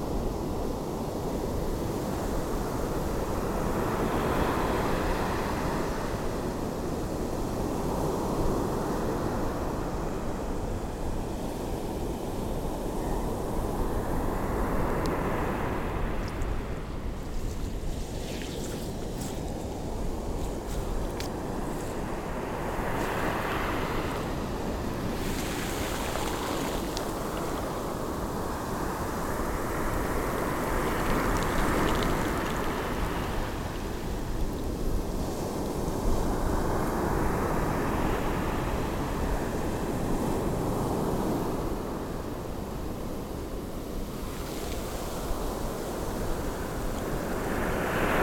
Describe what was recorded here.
Ocean waves and lapping water around feet in the sea foam on Cromer beach. Zoom F1 and Zoom XYH-6 Stereo capsule